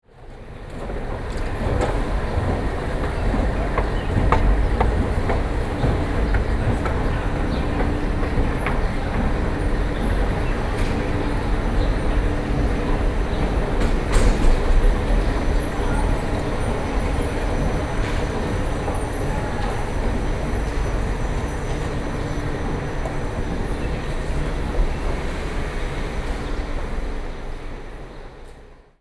Xizhi Station, New Taipei City - The old escalator
Xizhi District, New Taipei City, Taiwan, 4 November 2012